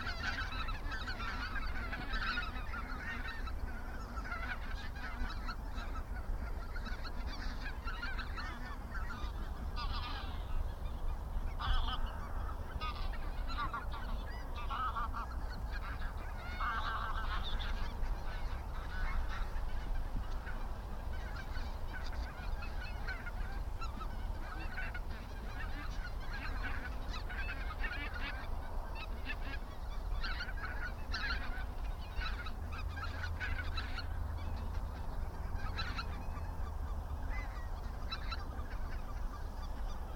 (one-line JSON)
{"title": "Veerweg, Bronkhorst, Netherlands - Kunstgemaal, Ganzenveld", "date": "2021-01-08 15:14:00", "description": "Geese.Distant river and road traffic.\nSoundfield Microphone, Stereo decode.", "latitude": "52.07", "longitude": "6.17", "altitude": "6", "timezone": "Europe/Amsterdam"}